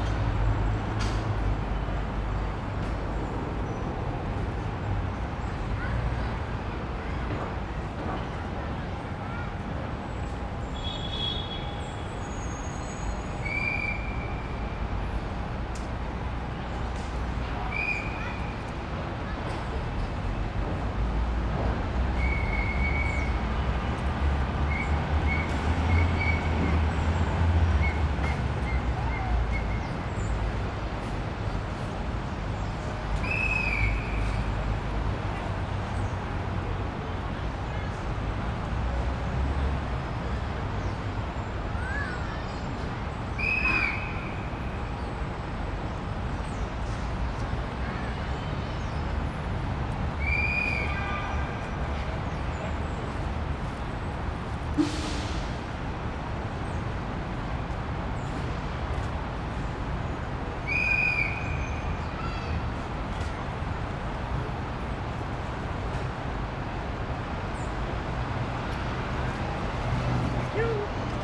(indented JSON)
{
  "title": "new mic in Union Square Park, New York",
  "date": "2003-11-11 11:11:00",
  "description": "field recording from 2003 using a mini disc recorder and my (then new) audio-technica stereo mic",
  "latitude": "40.74",
  "longitude": "-73.99",
  "altitude": "25",
  "timezone": "America/New_York"
}